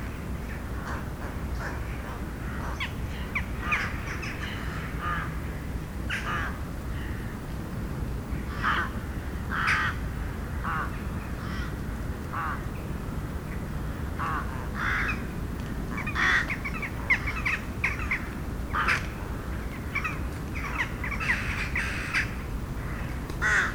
Vienna, Donaupark - Crows at Dusk (schuettelgrat)
Crows at dusk.
Vienna, Austria, 27 February 2011